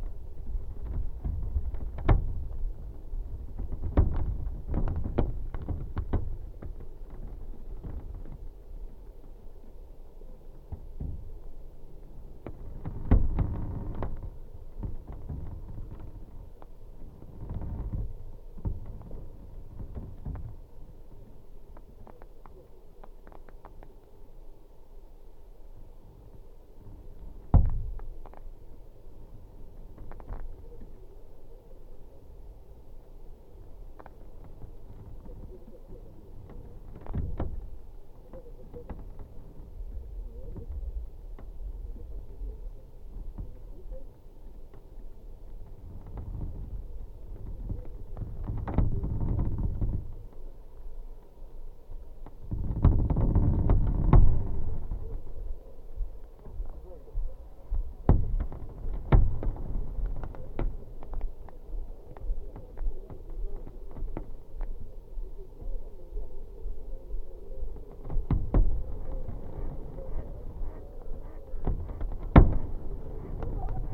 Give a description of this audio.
LOM geophone on the very tiny ice at the park riverside. the ice work as membrane so you can hear speaking passengers...